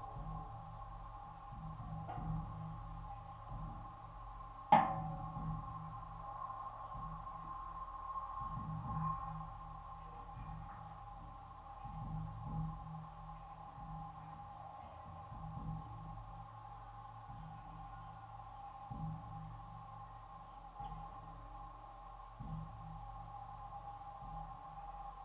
Antalieptė, Lithuania, old hanging bridge
hanging bridge with a sign "No Trespass" (but the locals still walk through it). Geophone on some support wires.
Utenos apskritis, Lietuva, 15 May 2020